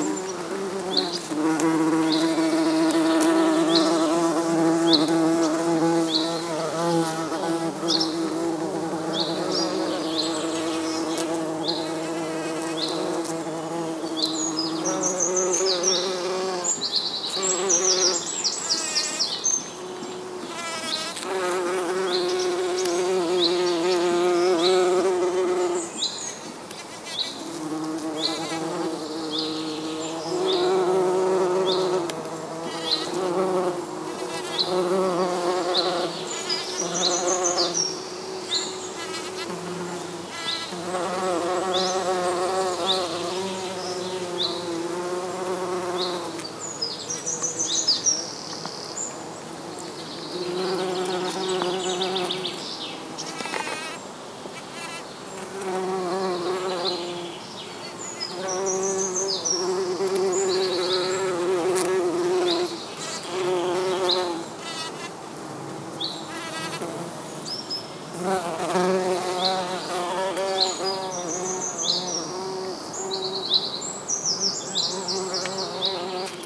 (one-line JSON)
{"title": "Llanrug, Gwynedd, UK - Bumble Bees", "date": "2016-06-14 09:40:00", "description": "Bumble Bees feeding on a yellow flowered shrub, recorded on a Sony M10 with inbuilt mics.", "latitude": "53.14", "longitude": "-4.17", "altitude": "147", "timezone": "Europe/London"}